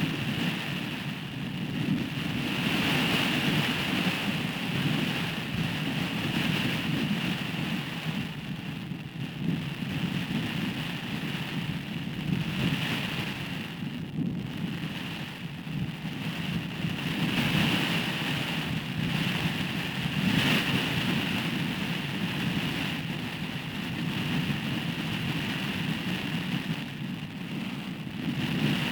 Unnamed Road, Isle of Islay, UK - natural art installation: blown sand on machir bay
fine weather coming in from the West. Stiff breeze and a great sunset. Down on the beach a very exciting art installation was taking place as the breeze shaped and reshaped the sand into extraordinary designs. I put 2 contact microphones with the plates facing the oncoming sand and here for your delight a delectation is the soundtrack to the West Wind installation.
We're here courtesy of the Wildlife Sound Recording Society and their knowledge, enthusiasm and willingness to share skills and techniques with a sound faffer has been great.